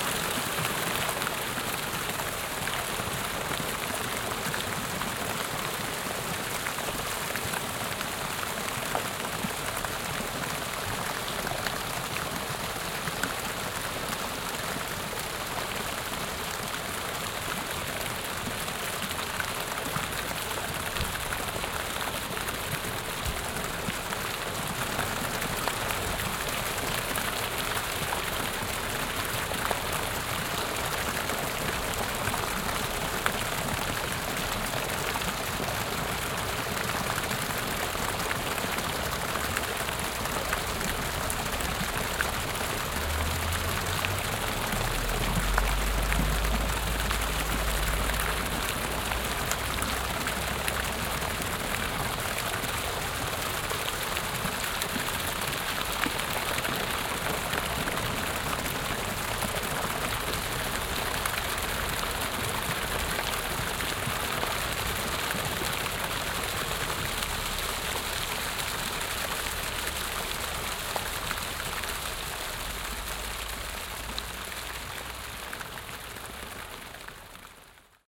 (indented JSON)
{
  "title": "haan, neuer markt, stadtbrunnen",
  "description": "wassergeräusche des intervallgesteuerten stadtbrunnens, leichte wind und verkehrsgeräusche\n- soundmap nrw\nproject: social ambiences/ listen to the people - in & outdoor nearfield recordings",
  "latitude": "51.19",
  "longitude": "7.01",
  "altitude": "161",
  "timezone": "GMT+1"
}